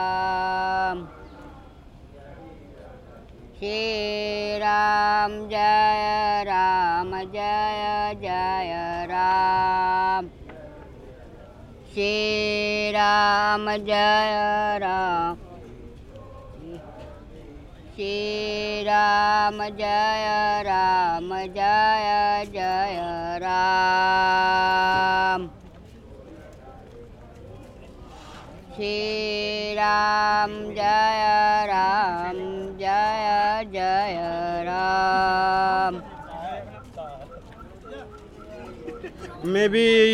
Ram Jhula, ROAD, Rishikesh, Uttarakhand, Inde - Rishikesh - Naga Sadu
Rishikesh - Naga Sadu
13 June 2008, 17:00